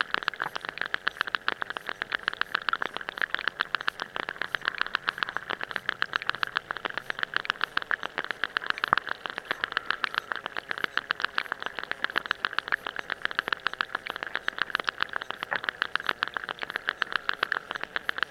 Kelmė, Lithuania, underwater rhythms
underwater recording in city's pond